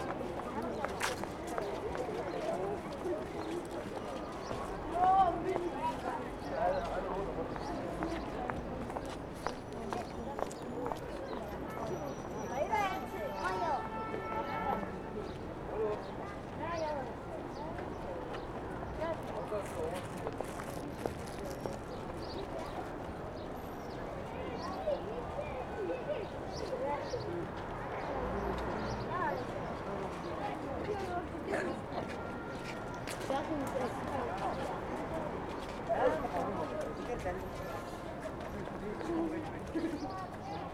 Khoroo, Ulaanbaatar, Mongolei - steps
children's day 2013, microphone on street level of the peace avenue
Border Ulan Bator - Töv, Монгол улс, 1 June 2013